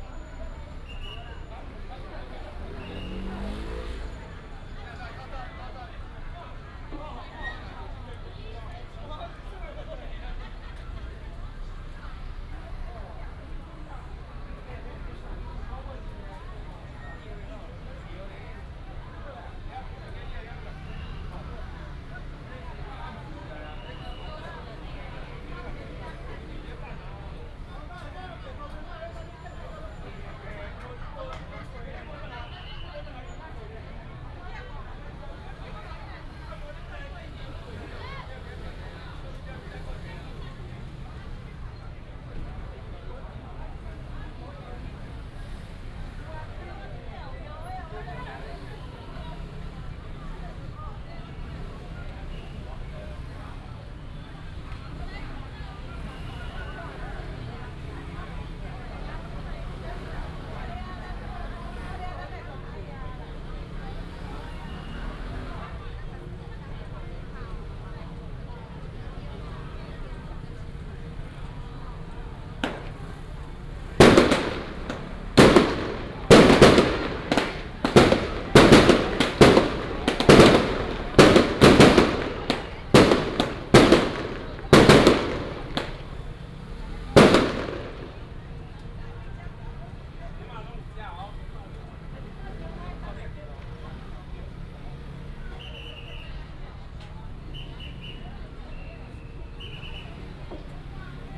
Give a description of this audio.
Fireworks and firecrackers, Traffic sound, Baishatun Matsu Pilgrimage Procession